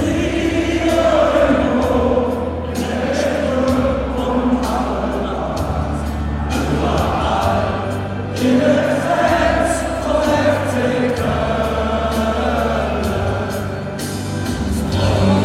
Cologne, Rheinenergie-Stadion, Deutschland - FC Hymne
Football match of FC Köln vs. FC Kaiserslautern in the Rhein-Energie-Stadium, shortly before the start. After the team line up by the stadium speaker the fans (ca. 45.000 people) sang out the hymn of the footballclub of Cologne
Cologne, Germany, 20 September